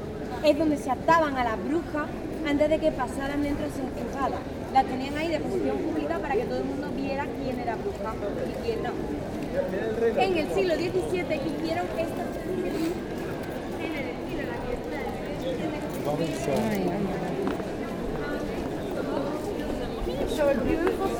Brugge, België - Guided tour of Bruges
Guided tour of the Brugge city near the Mozarthuys. Very much tourists and a lot of guides showing the way with colourful umbrellas.